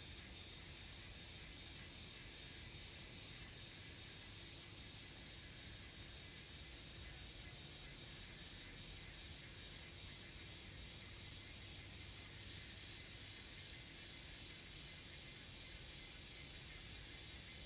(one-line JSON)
{"title": "桃米里, Nantou County, Taiwan - Cicada and Bird sounds", "date": "2016-06-07 13:08:00", "description": "Cicada sounds, Bird sounds, Faced with bamboo\nZoom H2n MS+XY", "latitude": "23.96", "longitude": "120.92", "altitude": "615", "timezone": "Asia/Taipei"}